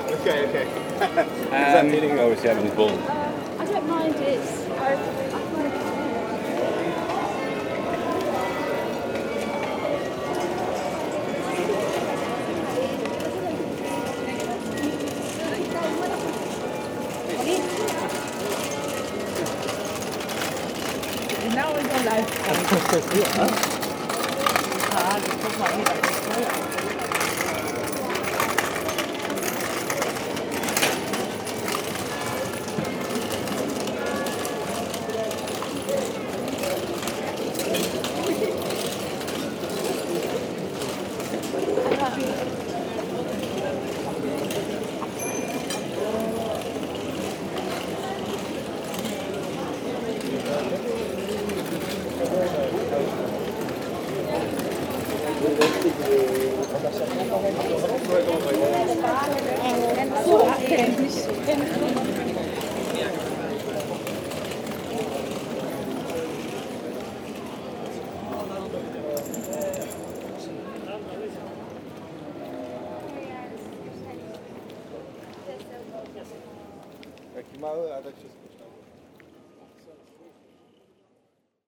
{
  "title": "Antwerpen, Belgique - Grote Markt - Central square",
  "date": "2018-08-04 13:45:00",
  "description": "Two persons walking with small scooters, on the cobblestones of the Antwerpen central square.",
  "latitude": "51.22",
  "longitude": "4.40",
  "altitude": "6",
  "timezone": "GMT+1"
}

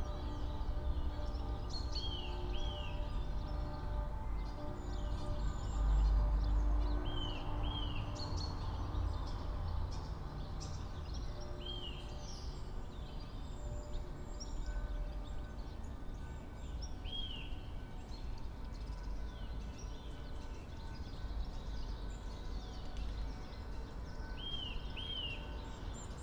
{
  "title": "all the mornings of the ... - apr 14 2013 sun",
  "date": "2013-04-14 06:58:00",
  "latitude": "46.56",
  "longitude": "15.65",
  "altitude": "285",
  "timezone": "Europe/Ljubljana"
}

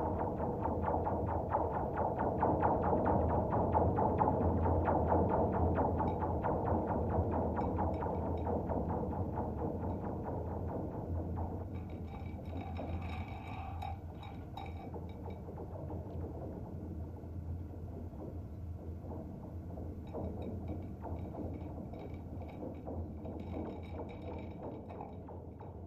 {
  "title": "Green Bridge, Brisbane Cable 8",
  "latitude": "-27.50",
  "longitude": "153.02",
  "altitude": "3",
  "timezone": "GMT+1"
}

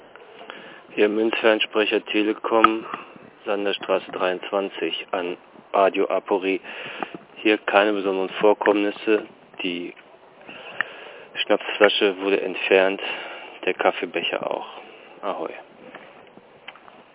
{
  "title": "münzfernsprecher, sanderstr. - radio aporee ::: münzfernsprecher, sanderstr. 23 ::: 29.04.2007 20:42:39",
  "latitude": "52.49",
  "longitude": "13.43",
  "altitude": "46",
  "timezone": "GMT+1"
}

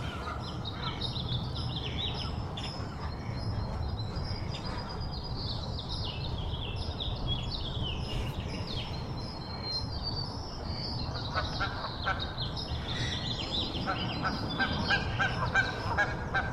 {
  "title": "Lake Merritt, Oakland, CA, USA - Dawn Chorus, Lake Merritt Bird Sanctuary",
  "date": "2021-05-01 05:34:00",
  "description": "The Bird Sanctuary at Lake Merritt in Oakland, California from Dawn Chorus starting at 5:34am to roughly 6:20am. The recording was made with a pair of Lom Usi Pro microphones in X/Y configuration mixed with Sound Professionals SP-TFB-2 in-ear binaural mics.",
  "latitude": "37.81",
  "longitude": "-122.26",
  "altitude": "10",
  "timezone": "America/Los_Angeles"
}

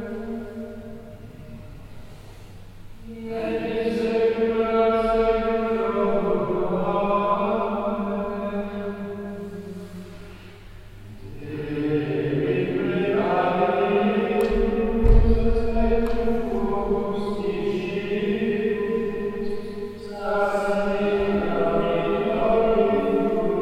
{
  "title": "clervaux, abbey st. maurice, vesper",
  "date": "2011-08-02 19:18:00",
  "description": "Opening the chapelle door, the amplified sound of the priest choir celebrating the evening vesper (here to be heard in a short excerpt). In the background occasionally the openingan closing of the door.\nClervaux, Abtei St. Mauritius, Vesper\nÖffnen der Kapellentür, das verstärkte Geräusch vom Chor der Patren, die die Abendvesper feiern (hier in einem kurzen Ausschnitt). Im Hintergrund gelegentlich das Öffnen und Schließen der Tür.\nClervaux, abbaye Saint-Maurice, vêpres\nOuverture de la porte de la chapelle, bruit puissant de la chorale des pères qui célèbrent les vêpres (court enregistrement). Dans le fond, on entend par intermittence l’ouverture et la fermeture de la porte.\nProject - Klangraum Our - topographic field recordings, sound objects and social ambiences",
  "latitude": "50.06",
  "longitude": "6.02",
  "altitude": "450",
  "timezone": "Europe/Luxembourg"
}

